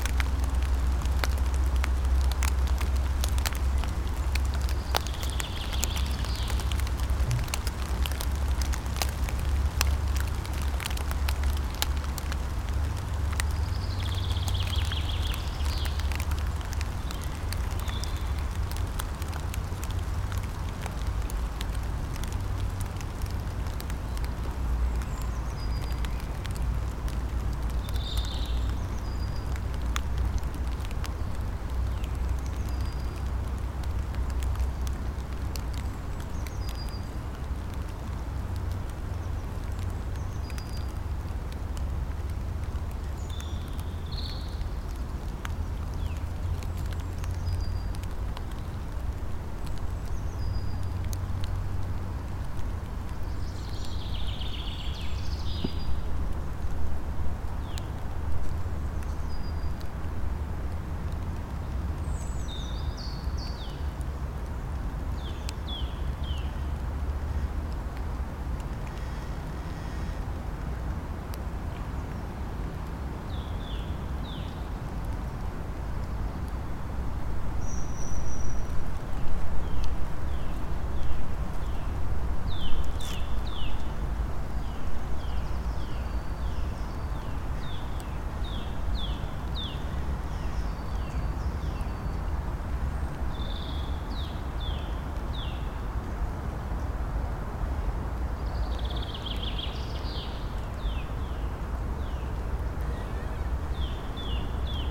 Weimar, Deutschland - OstPunkt
Seam (Studio fuer elektroakustische Musik) - klangorte - OstPunkt
Weimar, Germany, 23 April, 13:42